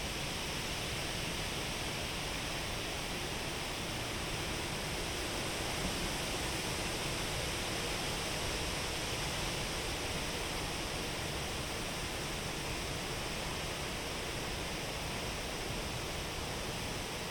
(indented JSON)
{
  "title": "Kintai, Lithuania, under the biggest lithuanian thuja",
  "date": "2022-07-24 17:50:00",
  "description": "Binaural recording: standing under Kintai's thuja - the biggest thuja in Lithuania.",
  "latitude": "55.42",
  "longitude": "21.26",
  "altitude": "4",
  "timezone": "Europe/Vilnius"
}